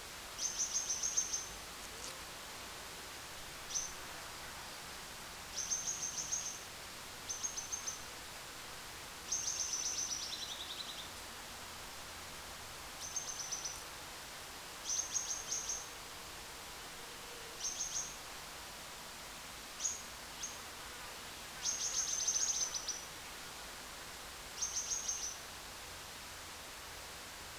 Hong Kong Trail Section, High West, Hong Kong - H006 Distance Post
The sixth distance post in HK Trail, located at the north-northeast of High West, filled with trees and a lots of birds rest there . You can hear the unique calling of birds like Fire-breasted Flowerpecker or Yellow-browed warbler.
港島徑第六個標距柱，位於西高山東北偏北，樹木繁盛，吸引不同雀鳥休息。你可以聽到如紅胸啄花鳥或黃眉柳鶯等的獨特叫聲，可算是鳥語花香。
#Birds, #Bee, #Plane